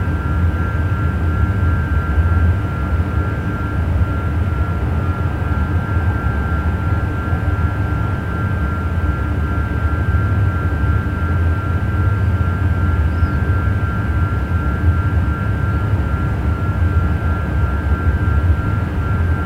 Boulevard Armand Duportal, Toulouse, France - Onde Stationnaire
Onde Stationnaire crée par le Local d'alimentation électrique du bâtiment
Une onde stationnaire est le phénomène résultant de la propagation simultanée dans des sens opposés de plusieurs ondes de même fréquence et de même amplitude, dans le même milieu physique, qui forme une figure dont certains éléments sont fixes dans le temps. Au lieu d'y voir une onde qui se propage, on constate une vibration stationnaire mais d'intensité différente, en chaque point observé. Les points fixes caractéristiques sont appelés des nœuds de pression.
Occitanie, France métropolitaine, France